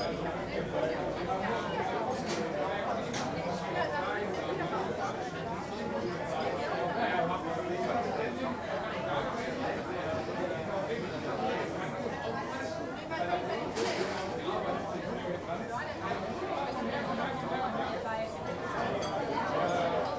Köln, Zülpicher Str. - Oma Kleinmann
famous again for their schnitzels. retaurant ambient, before dinner.